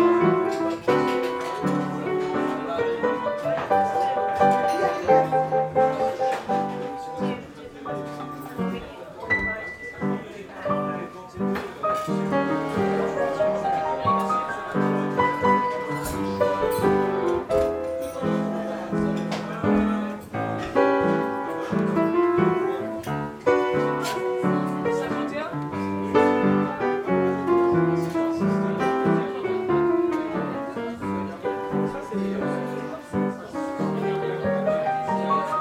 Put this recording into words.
People eating at La Cale restaurant with a piano player, Zoom H6